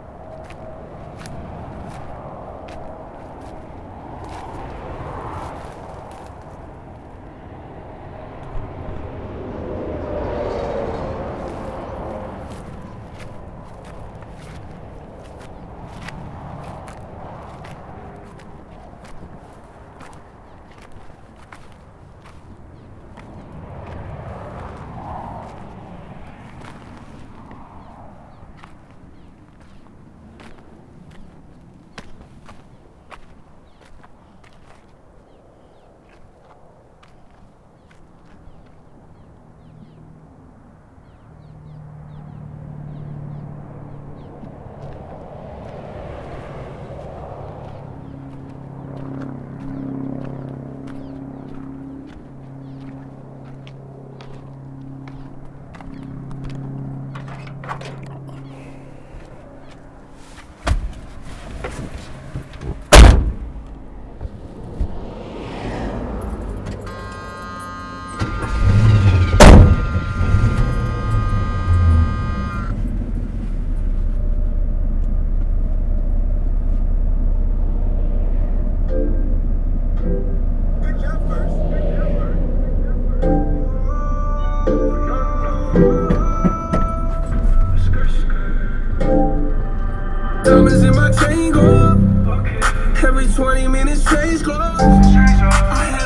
here comes the train! what luck! we're very close, it gets, very loud...headphone wearers! proposal to turn down the volume! then we drive thirty five feet to where we were headed and do what we planned on doing. eka sneezing at 5:08..... much freeway traffic noises ...post malone...selah.